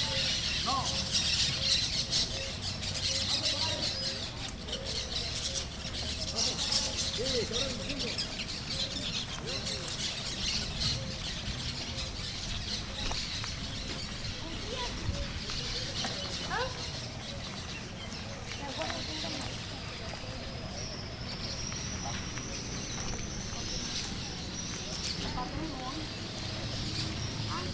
Orilla del Magdalena, Mompós, Bolívar, Colombia - Areneros
Un grupo de tres hombres sin camisa cargan a pala una volqueta con arena y piedra de río que fue extraída del Magdalena. Una de las barca que sirven para recoger la arena, espera en la orilla.